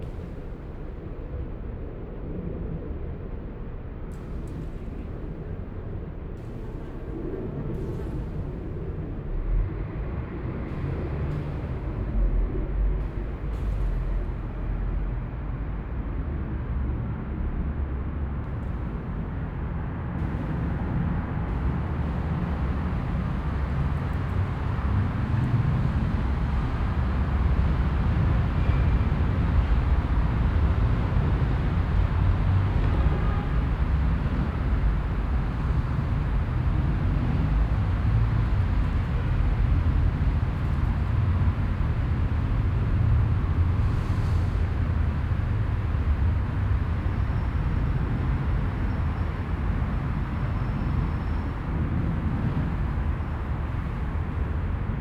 Werden, Essen, Deutschland - essen, abbey church, bells
In Essen Werden an der Abtei Kirche. Der Klang der 4 Uhr Glocken. Im Hintergrund die echoartige Antwort der unweiten evangelischen Kirche und Motorengeräusche der Straße.
At the abbey in Essen Werden. The sound of the 4oclock bells. In the distance the echolike answer of the nearby evangelian church.
Projekt - Stadtklang//: Hörorte - topographic field recordings and social ambiences